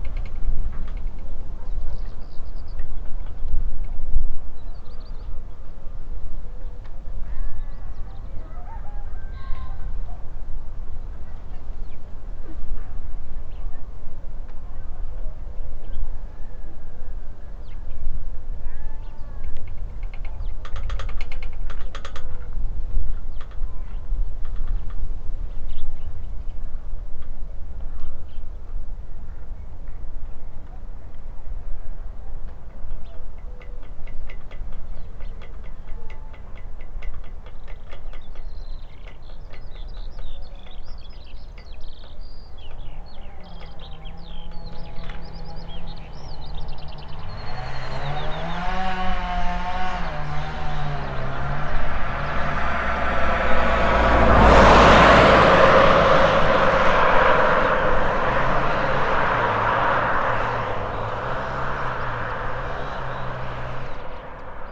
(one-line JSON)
{"title": "Village Atmosphere, Inwałd, Poland - (762 XY) Trembling lamppost", "date": "2021-04-24 13:35:00", "description": "Stereo recording of a distant village atmosphere from a perspective of a trembling lamppost on a windy day.\nRecorded with Rode NT4 on Sound Devices MixPre6 II.", "latitude": "49.87", "longitude": "19.41", "altitude": "308", "timezone": "Europe/Warsaw"}